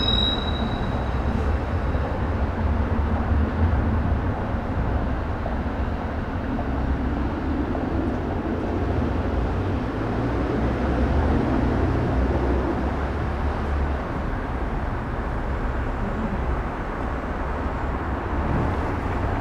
Brussels, Merode at night.
Bruxelles, Mérode la nuit.